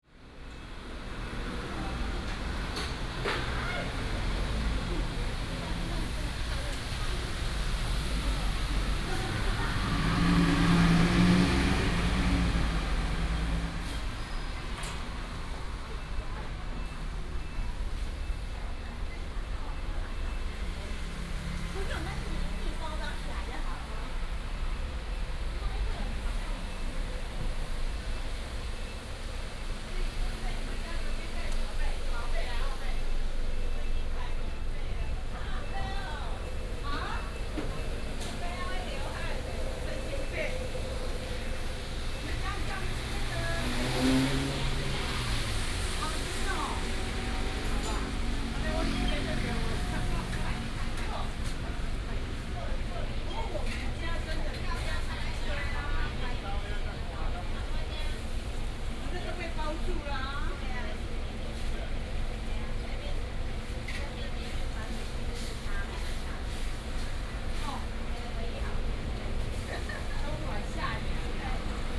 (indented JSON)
{
  "title": "Gongzheng Rd., Luodong Township - Restaurant and traffic sound",
  "date": "2017-12-09 09:51:00",
  "description": "Restaurant and traffic sound, Rainy day, Binaural recordings, Sony PCM D100+ Soundman OKM II",
  "latitude": "24.68",
  "longitude": "121.77",
  "altitude": "16",
  "timezone": "Asia/Taipei"
}